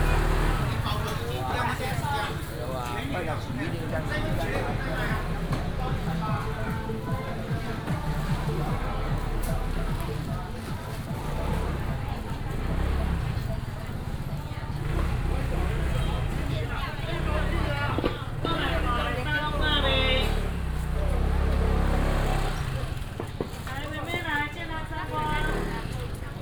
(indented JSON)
{
  "title": "楊梅第二公有市場, Yangmei District - Walk in the market",
  "date": "2017-01-18 12:23:00",
  "description": "Walk in the market",
  "latitude": "24.91",
  "longitude": "121.15",
  "altitude": "179",
  "timezone": "Asia/Taipei"
}